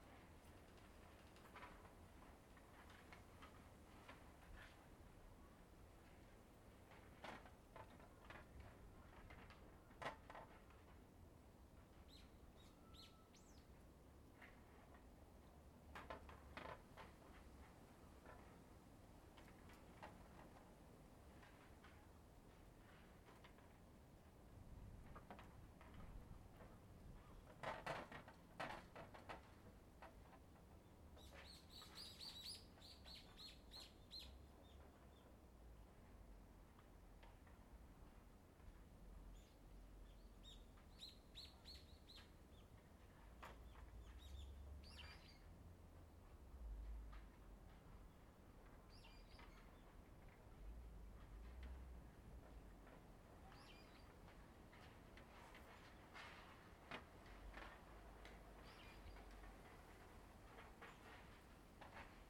Noguchichō, Higashimurayama-shi, Tōkyō-to, Japonia - Rattling prayers
Rattling of wooden prayer boards against the wind. Recorded inside a cementery next to the oldest buddhist temple in the Tokyo area, which is also one of the oldest wooden buildings still standing in Japan, dating back to 1407. Recorded with Zoom H2N.
Higashimurayama-shi, Tōkyō-to, Japan